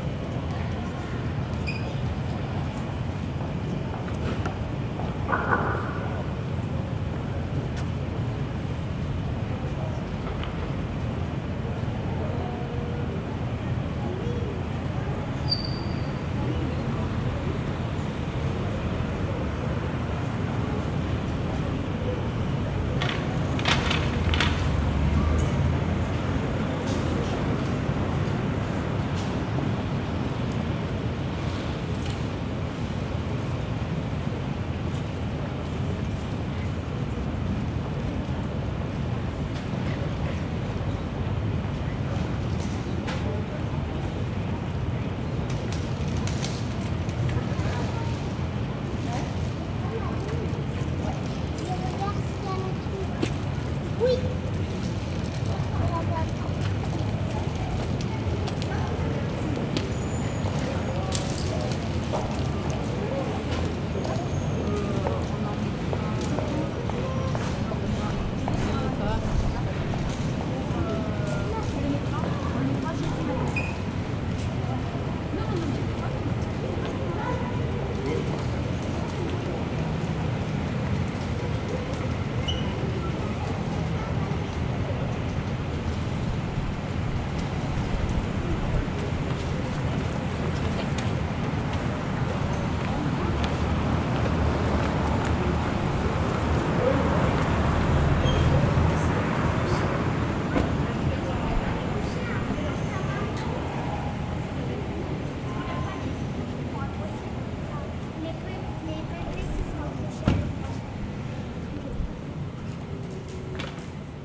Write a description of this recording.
commercial gallery exit, air conditioning, street, car crossing people talking, Captation ZOOMH4n